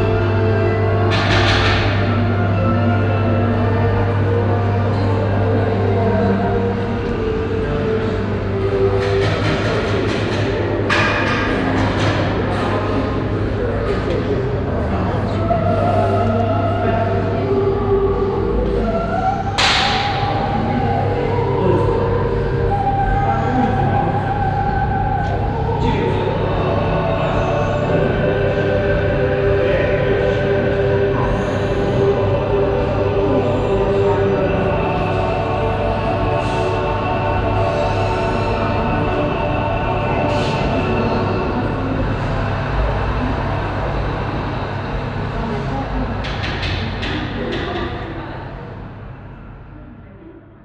Central Area, Cluj-Napoca, Rumänien - Cluj, cinema Florin Piersic, foyer
Inside the cinema foyer. The sounds of a christmas movie advertisment
and barsounds from the attached restaurant mixing up in the reverbing stone and glass architecture with a deep ventilaltion hum.
international city scapes - topographic field recordings and social ambiences